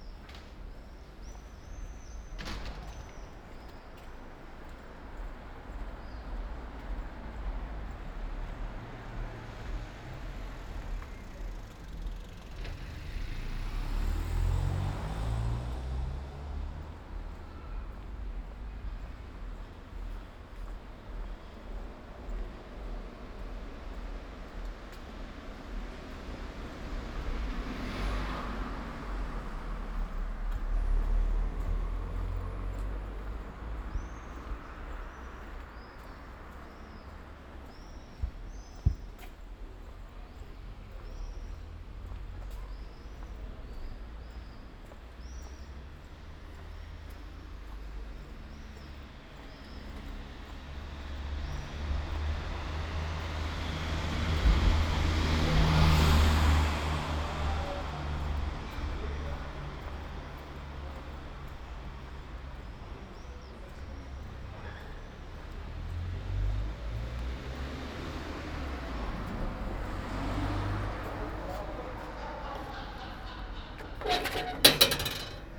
Ascolto il tuo cuore, città. I listen to your heart, city. Several chapters **SCROLL DOWN FOR ALL RECORDINGS** - “Posting postcards, day 1 of phase 2, at the time of covid19” Soundwalk
“Posting postcards, day 1 of phase 2, at the time of covid19” Soundwalk
Chapter LXVI of Ascolto il tuo cuore, città. I listen to your heart, city.
Monday May 4th 2020. Walking to mailbox to post postcard, San Salvario district, fifty five days (but first day of Phase 2) of emergency disposition due to the epidemic of COVID19
Start at 8:14 p.m. end at 8:34 A.m. duration of recording 20’39”
The entire path is associated with a synchronized GPS track recorded in the (kml, gpx, kmz) files downloadable here:
Piemonte, Italia